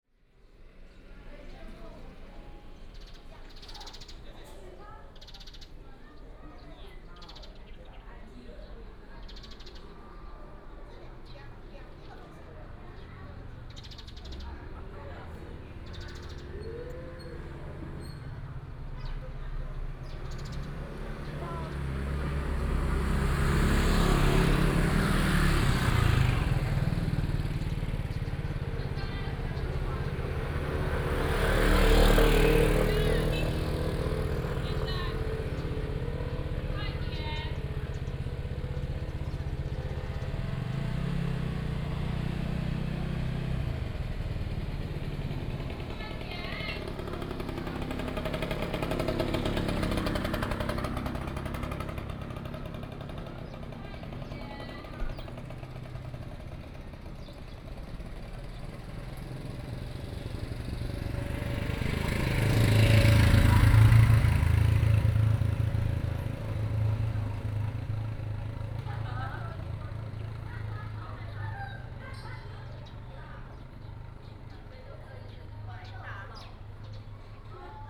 Paiwan tribe, traffic sound, Birds sound